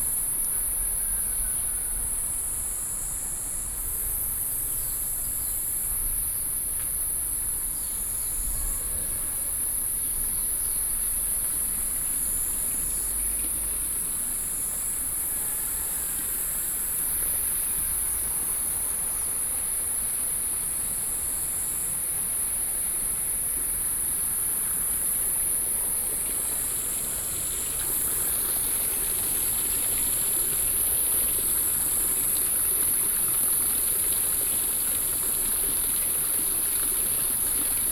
Ln., Zhonghe St., Shulin Dist. - Walking along the stream
Walking along the stream, Insects sounds, Bird calls, Dogs barking
Binaural recordings
Sony PCM D50 + Soundman OKM II